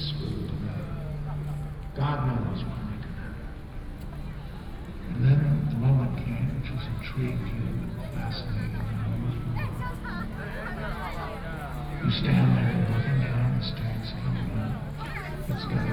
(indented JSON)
{
  "title": "National Chiang Kai-shek Memorial Hall, Taipei - June 4th event activity",
  "date": "2013-06-04 19:31:00",
  "description": "The Gate of Heavenly Peace., Sony PCM D50 + Soundman OKM II",
  "latitude": "25.04",
  "longitude": "121.52",
  "altitude": "8",
  "timezone": "Asia/Taipei"
}